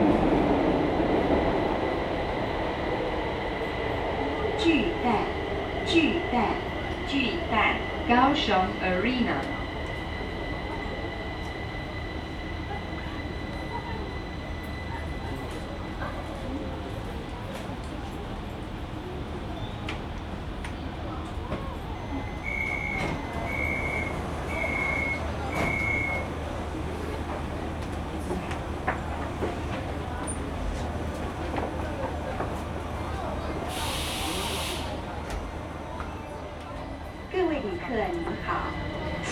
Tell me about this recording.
Kaohsiung Mass Rapid Transit, from Ecological District Station to Houyi Station, Sony ECM-MS907, Sony Hi-MD MZ-RH1